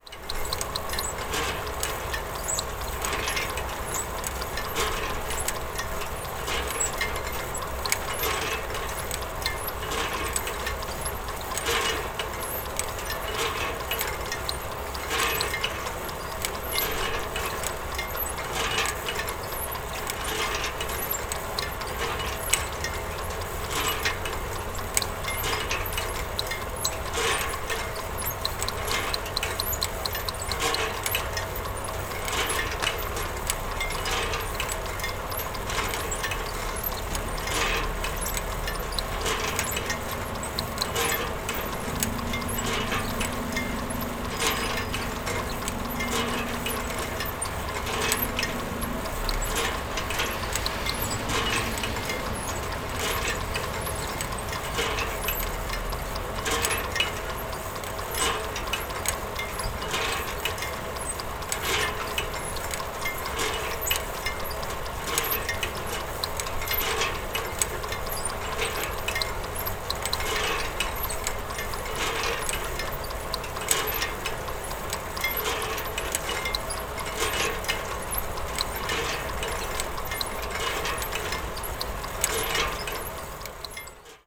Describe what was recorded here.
Art sonor. Sound art. Arte Sonoro.